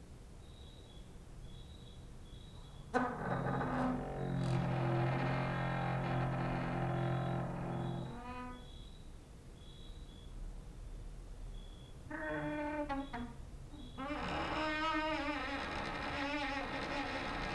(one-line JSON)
{"title": "Mladinska, Maribor, Slovenia - late night creaky lullaby for cricket/15", "date": "2012-08-24 21:29:00", "description": "cricket outside, exercising creaking with wooden doors inside", "latitude": "46.56", "longitude": "15.65", "altitude": "285", "timezone": "Europe/Ljubljana"}